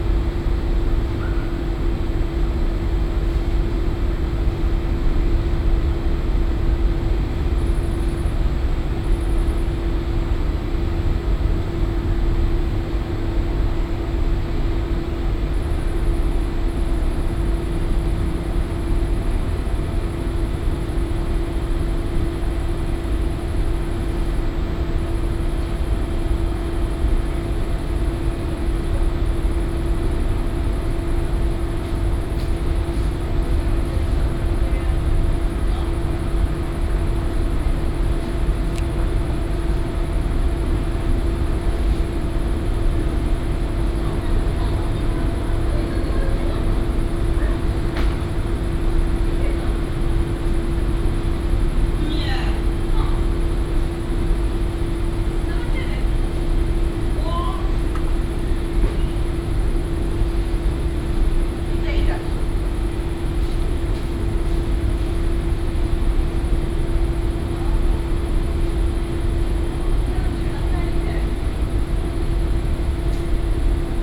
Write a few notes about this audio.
(binaural recording) recorded in front of a laundry. hum of commercial washing machines. employees talking a bit. (roland r-07 + luhd PM-01 bins)